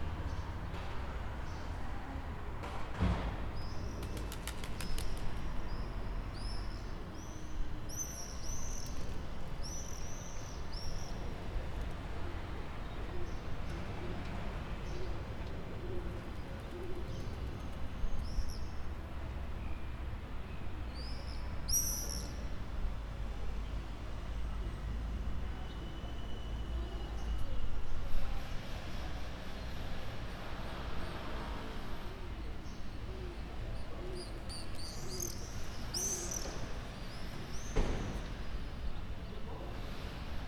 Poznan, Lazarz district, city ambience
recorded on my friends balcony